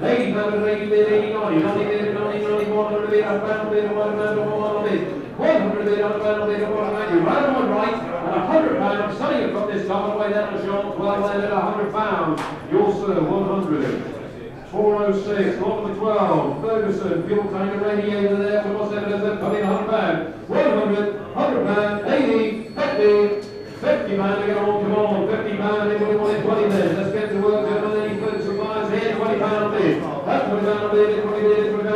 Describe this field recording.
Auctioneer at Farm machinery and Tractor sale ... auctioneer has headset mic ... his assistant carries a small amplifier ... voices ... recorded with lavalier mics clipped to baseball cap ...